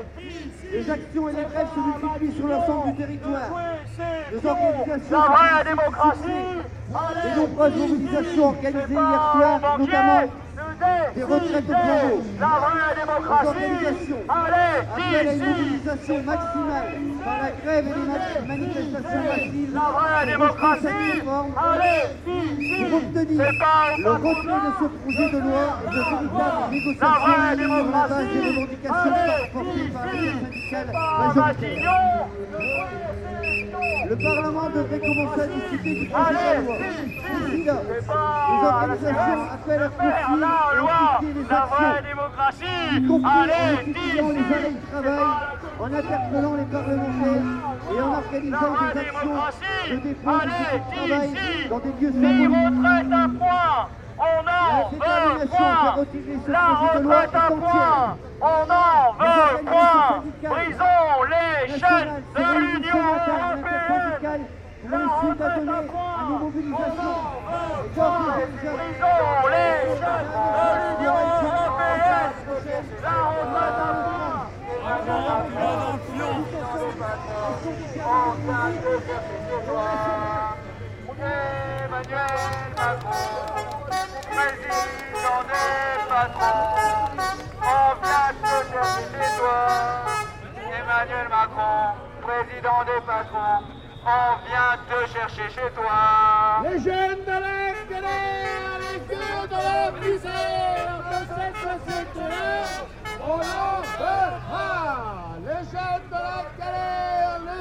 Pl. du Maréchal Foch, Arras, France - Arras - Manifestation - 2020
Arras (Pas-de-Calais)
Sur la place de la gare, manifestation contre la réforme des retraites (sous la présidence d'Emmanuel Macron).
revendications et slogans.